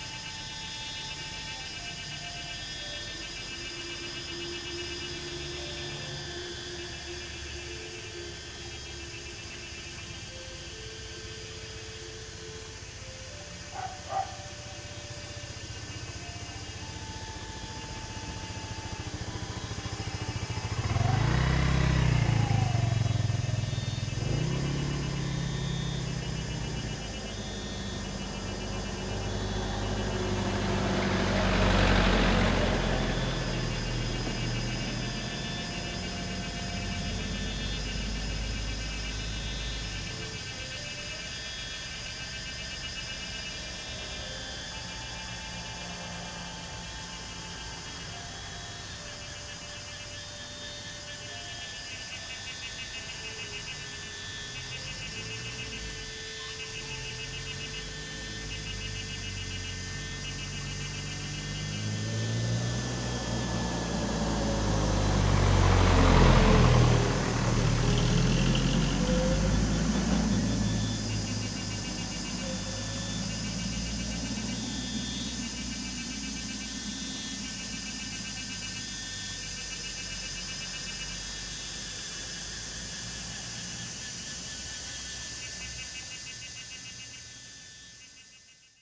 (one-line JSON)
{"title": "Lane TaoMi, Puli Township - Cicadas cry", "date": "2015-06-10 18:50:00", "description": "Cicadas cry, Dogs barking\nZoom H2n MS+XY", "latitude": "23.94", "longitude": "120.93", "altitude": "471", "timezone": "Asia/Taipei"}